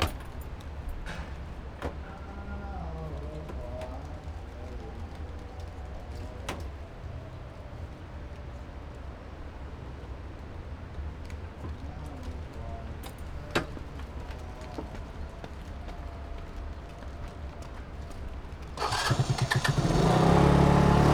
觀音亭海濱公園, Magong City - In front of the temple
In front of the temple
Zoom H6+Rode NT4
Magong City, Penghu County, Taiwan, 23 October 2014